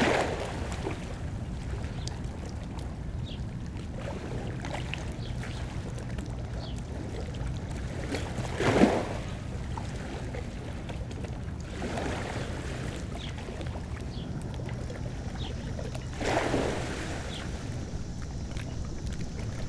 Sounds of water and birds in Newport, RI
Zoom h6